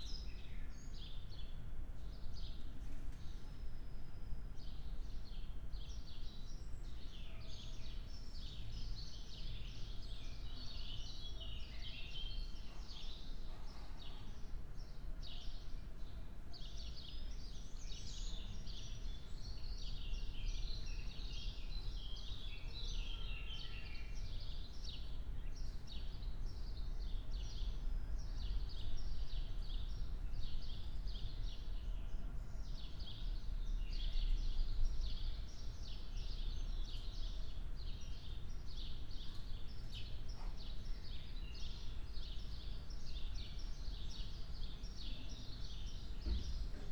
quite spring ambience in backyard, black cap (Möcnchsgrasmücke) and other birds
(Raspberry PI Zero / IQAudioZero / Primo EM172)